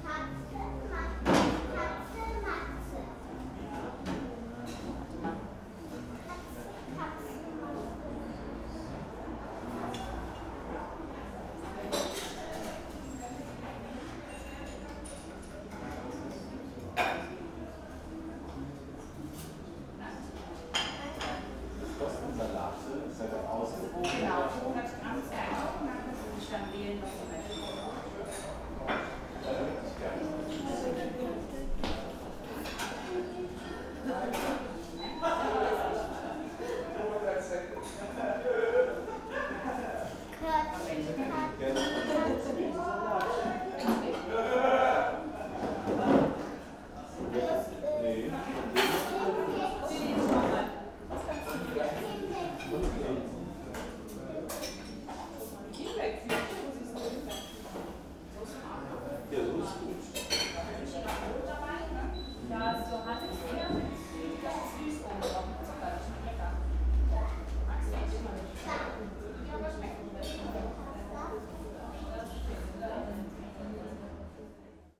cologne, aachenerstrasse, cafe schmitz - sunday afternoon
cafe ambience sunday afternoon.
(quiche, red wine, espresso, cheesecake)
10 October 2010